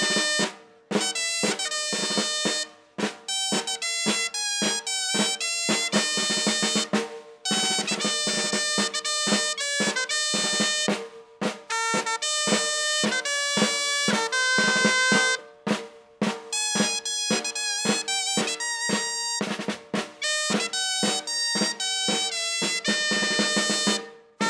Tàrbena - Province d'Alicante - Espagne
XXII sème fête gastronomique et Artisanal de Tàrbena
Inauguration de la 2nd Journée
Les 2 jeunes musiciens parcourent les rues de la ville
Ambiance 1
ZOOM H6